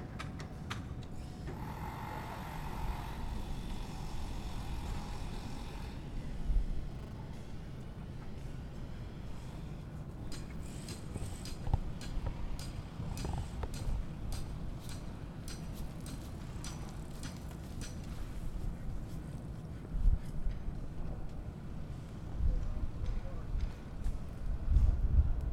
University of Colorado Boulder, Regent Drive, Boulder, CO, USA - Construct
February 2013